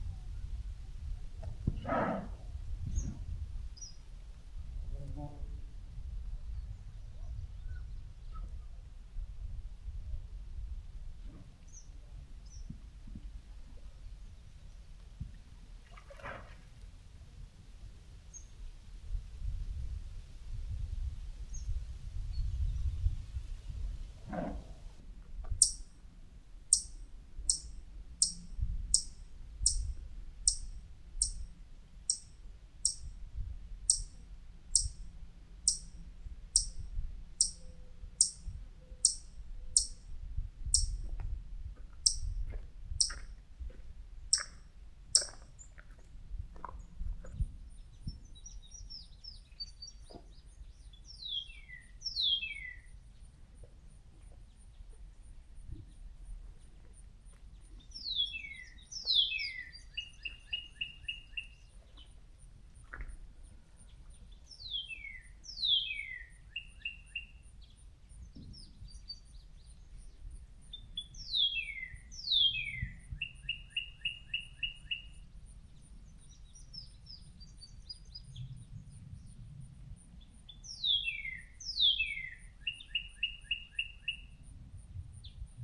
Audio recorded by Helen Geglio. Buffalo wallowing in pond and nearby birds. Recorded at an Arts in the Parks Soundscape workshop at Ouabache State Park, Bluffton, IN. Sponsored by the Indiana Arts Commission and the Indiana Department of Natural Resources.
21 July 2019, ~16:00